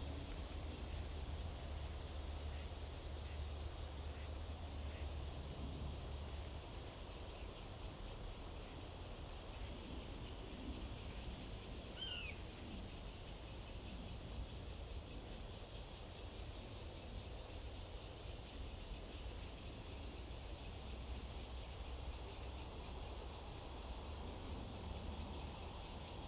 竹北原生林保護區, Zhubei City - In the woods
In the woods, Sound of the Birds, Old-growth forest, Cicada sound
Zoom H2n MS+XY